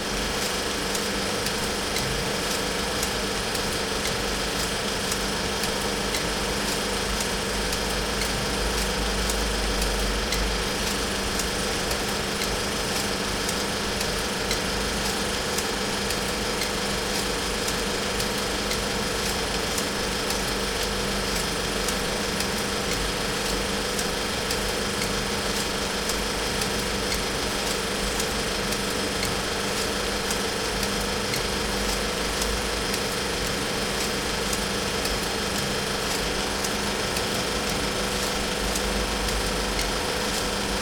köln, filmpalette - film projector and spool
24.03.2009 21:30 projector running, film spool hits unwinding movie periodically.